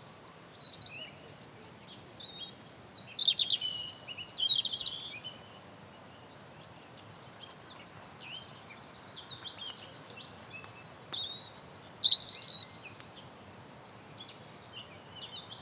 Grange, Lough Gur, Co. Limerick, Ireland - Midsummer solstice dawn chorus
Grange is the largest Neolithic stone circle in Ireland. This recording is on the eastern side of the circle with the microphone facing east. The recording was made under a tree and the loudest bird was sitting above us.
21 June 2014